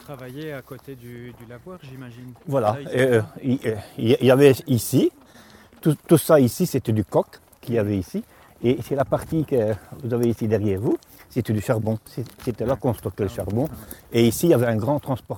Anderlues, Belgique - The coke plant - Franz Butryn
Franz Butryn
An old worker testimony on the old furnaces of the Anderlues coke plant. We asked the workers to come back to this devastated factory, and they gave us their remembrances about the hard work in this place.
Recorded with Patrice Nizet, Geoffrey Ferroni, Nicau Elias, Carlo Di Calogero, Gilles Durvaux, Cedric De Keyser.